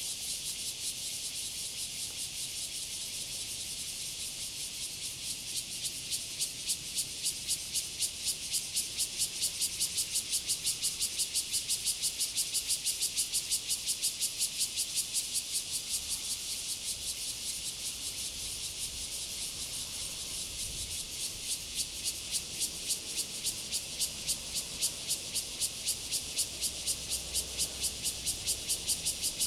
長虹橋, Fengbin Township - Cicadas sound
Cicadas sound, Traffic Sound
Zoom H2n MS +XY